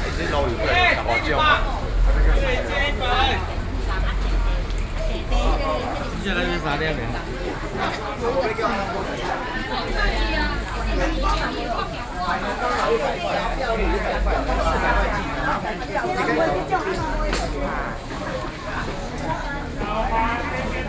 New Taipei City, Taiwan - Traditional markets
Xindian District, New Taipei City, Taiwan